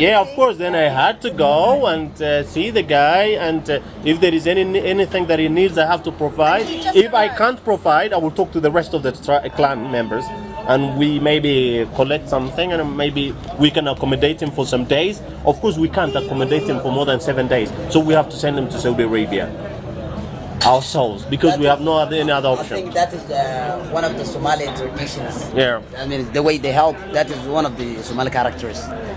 {
  "title": "Discret, Sana'a, Yémen - Tanzanian Taxi driver in Sana'a",
  "date": "2009-10-20 10:20:00",
  "description": "Tanzanian Taxi driver in Sana'a talk about the situation",
  "latitude": "15.35",
  "longitude": "44.19",
  "altitude": "2268",
  "timezone": "Asia/Aden"
}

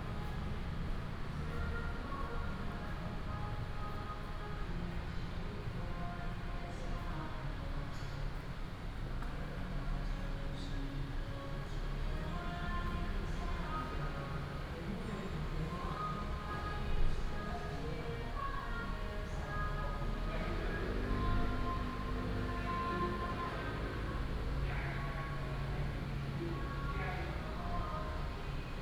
{
  "title": "龍元宮, 龍潭區 Taoyuan City - Walking in the temple",
  "date": "2017-07-25 08:12:00",
  "description": "In the temple, Traffic sound",
  "latitude": "24.87",
  "longitude": "121.21",
  "altitude": "236",
  "timezone": "Asia/Taipei"
}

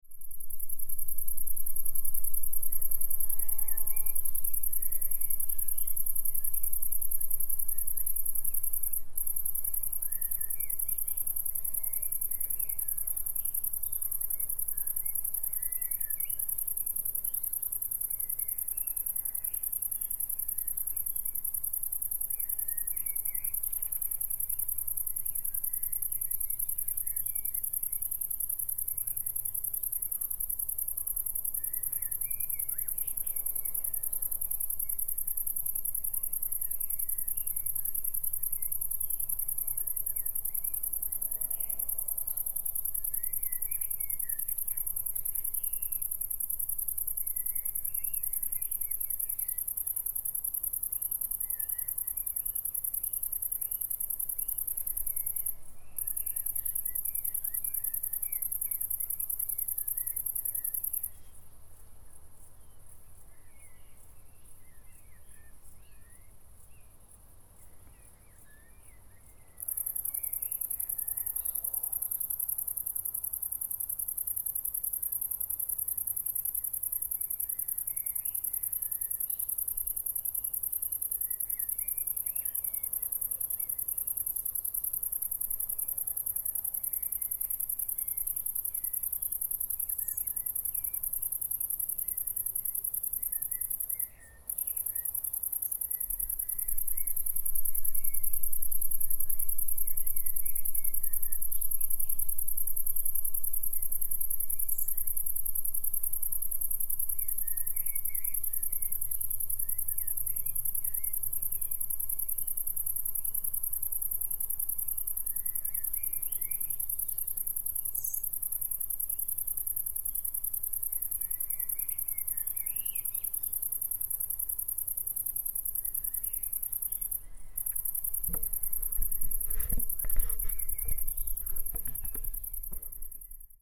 {
  "title": "Dijk, Keukengemaal, Bronkhorst, Netherlands - onder dijk (krekel)",
  "date": "2018-07-04 23:40:00",
  "description": "Nighttime recording. Crickets (and distant cows, etc) Zoom H1 in rycote blimp\nRecording made for the project \"Over de grens - de overkant\" by BMB con. featuring Wineke van Muiswinkel.",
  "latitude": "52.08",
  "longitude": "6.17",
  "altitude": "7",
  "timezone": "Europe/Amsterdam"
}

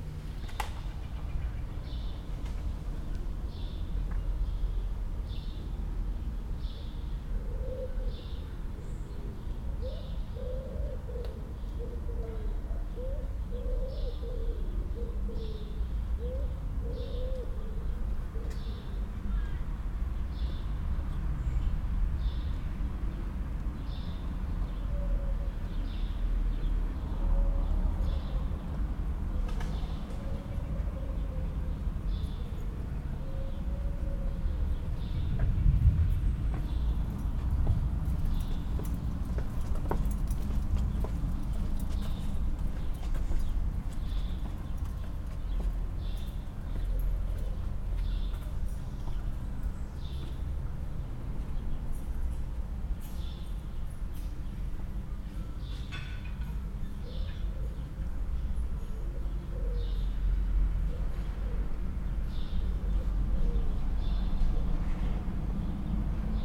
{
  "title": "Weimar, Weimar, Germany - Deep space 1: for headphones.",
  "date": "2020-08-09 19:44:00",
  "description": "Semi-cloudy weather at a transit bus station in Weimar. Facing me are big trees with pigeons flapping their wings at each other in a seemingly quarrelling mood. Intermittent calls of pigeons are present from left to right. A bicycle can heard softly passing by with piano music layered in the background. Footsteps can be heard from a passer-by from right to left and vehicular hums and traffic can be heard but laid back. Subtle winds and gathering thunder are in the sound.\nTemperature is around 32 Degrees and the space is relatively calm and meditative.\nRecording gear: Zoom F4, LOM MikroUsi Pro XLR version, Beyerdynamic DT 770 PRO Headphone.\nPost production monitoring headphone: Beyerdynamic DT 1990 PRO.\nRecording technique: Quasi-binaural.",
  "latitude": "50.98",
  "longitude": "11.32",
  "altitude": "225",
  "timezone": "Europe/Berlin"
}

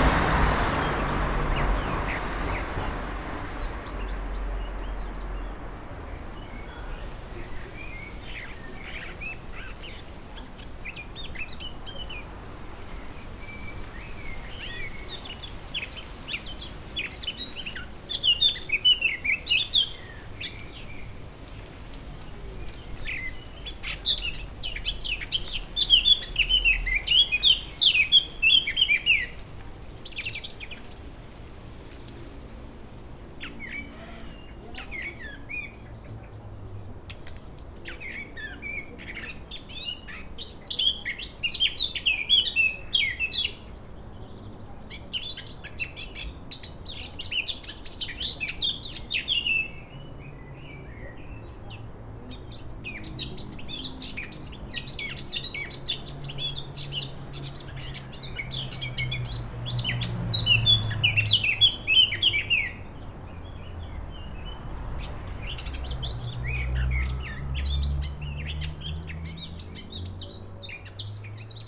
{
  "title": "Rue du Colonel Toussaint, Toulouse, France - birds in the garden",
  "date": "2022-02-26 14:00:00",
  "description": "birds in the garden, wind in the trees\nsound of the city, a car passes in the street",
  "latitude": "43.62",
  "longitude": "1.46",
  "altitude": "165",
  "timezone": "Europe/Paris"
}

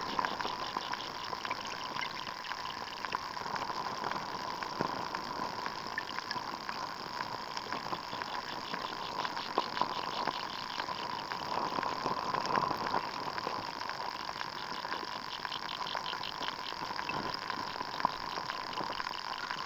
{
  "title": "Kintai, Lithuania, hydrophone in grassy water",
  "date": "2022-07-23 11:25:00",
  "description": "Underwater microphone in grassy seashore",
  "latitude": "55.42",
  "longitude": "21.25",
  "timezone": "Europe/Vilnius"
}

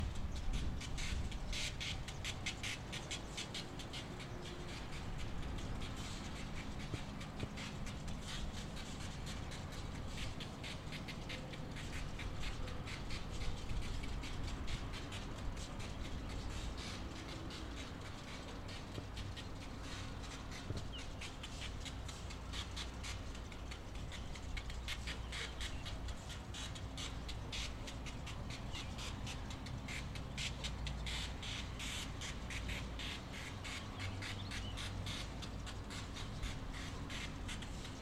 {
  "title": "Nebraska City, NE, USA - Freight Trains",
  "date": "2013-05-23 15:15:00",
  "description": "Recorded with Zoom H2. Recordings from Nebraska City while in residence at the Kimmel Harding Nelson Center for the Arts in Nebraska City from May 13 – May 31 2013. Source material for electro-acoustic compositions and installation made during residency. Short recording due to windy conditions.",
  "latitude": "40.68",
  "longitude": "-95.85",
  "altitude": "290",
  "timezone": "America/Chicago"
}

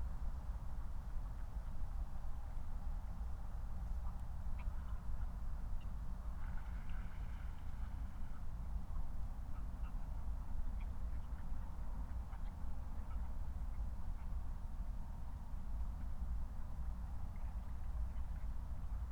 {
  "title": "Moorlinse, Berlin Buch - near the pond, ambience",
  "date": "2020-12-22 23:38:00",
  "description": "23:38 Moorlinse, Berlin Buch",
  "latitude": "52.64",
  "longitude": "13.49",
  "altitude": "50",
  "timezone": "Europe/Berlin"
}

